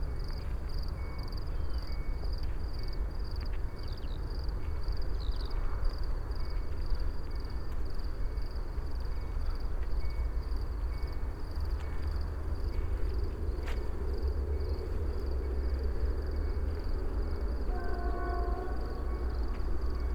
Poznan, Morasko, field road - evening chorus
(binaural) evening walk along a flied road on the outskirts of Poznan. crickets on boths sides of the road. the noisy drone comes from a heavy weight train. even though it was late evening the local traffic was still strong and making a lot of noise.